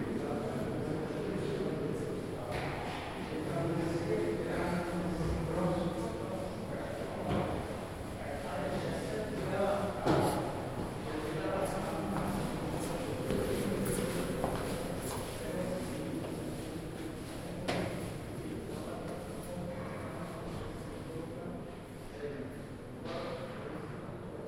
National Library Klementinum
stairs in the Klementinum, Slavic studies department